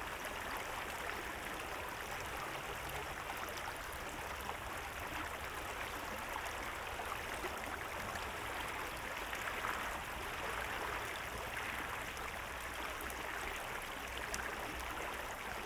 standing at the spring river flow. recorded with Sennheiser Ambeo headset.
19 March 2021, 16:50